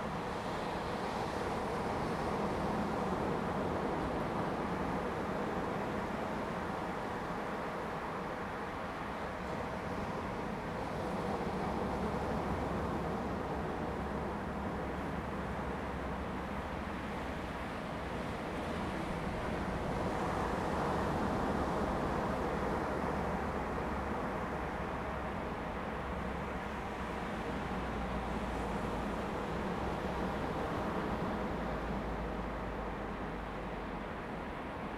正義休閒漁業區, Jinhu Township - At the beach
Sound of the waves, At the beach
Zoom H2n MS+XY
福建省, Mainland - Taiwan Border, 3 November 2014, ~17:00